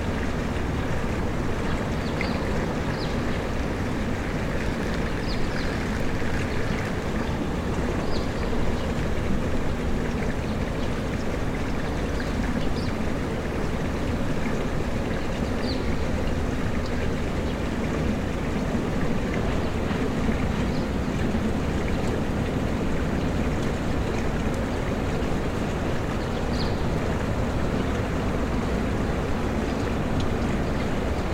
Mériadeck is the “post-apocalyptic” concrete district of Bordeaux.
It was built in the 1960’s, wiping out a former working-class neighborhood that had become unhealthy.
It is part of the major urban renewal programs carried out after the Second World War in France that embraced the concept of urban planning on raised concrete slabs from the 1950s
France métropolitaine, France, 12 February 2022